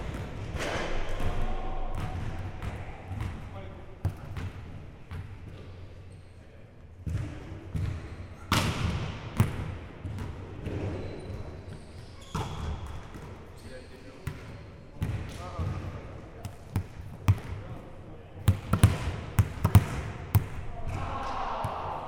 This is the sportive hall of Mont-Saint-Guibert. This wide hall is used by two villages. This is a great place for sports. Here, a few people are playing volley-ball, as training.
Mont-Saint-Guibert, Belgium, 26 May 2016, 20:10